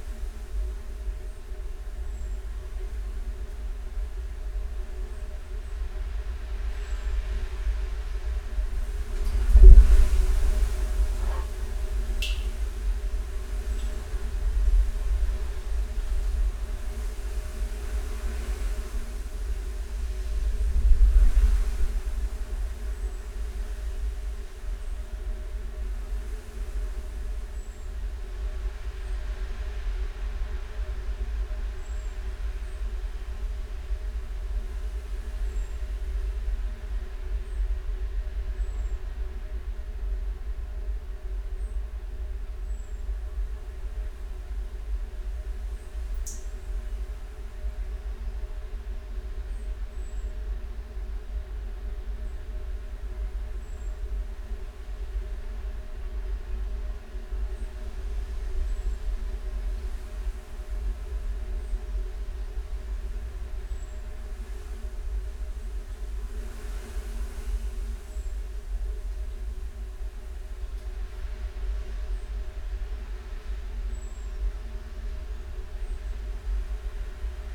Luttons, UK - empty water butt ...
empty water butt ... cleaned out water butt previous day ... gale was approaching so suspended lavalier mics inside ... some bangs and clangs and windblast ... bird song and calls from chaffinch ... house sparrow ... blackbird ... wren ... song thrush ...
Malton, UK, 16 June 2018, 05:00